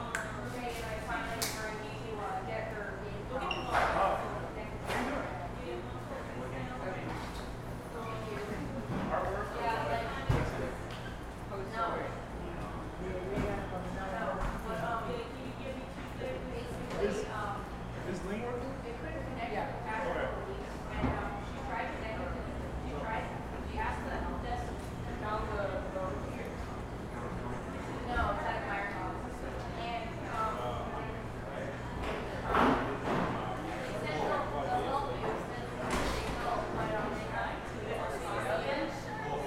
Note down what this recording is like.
Recorded in Cafe Doris with a Zoom H4n recorder.